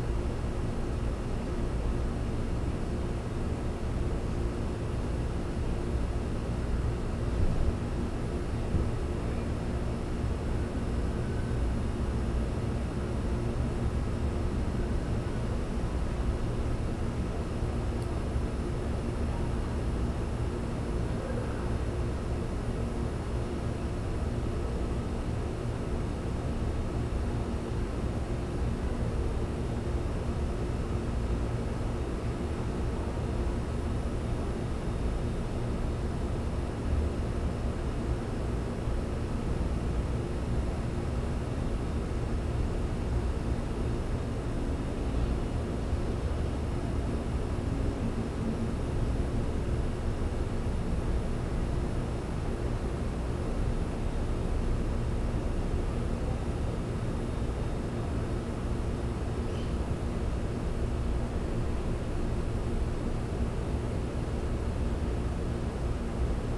W York St, Savannah, GA, USA - In Front of a Basement
This was a recording of an outdoor sitting area outside of a basement in Savanna, GA. This was a (nearly) 200-year-old house, complete with multiple stories and a basement. I don't exactly remember, but I believe the house number was 311 (I could be mistaken). The owners of this house regularly rent it out to people staying temporarily, and I was here for a family event on two 98-degree days in spring. The specific place where this was captured was also filled with various pieces of large, noisy outdoor equipment, mostly AC vents. This recording captured the general soundscape of the area, which included some typical urban sounds, some scattered noises in the background, and, of course, the aforementioned fans. The door also opened multiple times (thankfully people were quiet!), and towards the end of the recording you can hear a couple of children and an adult trying to get my attention from the nearby overhead balcony.
26 May, ~20:00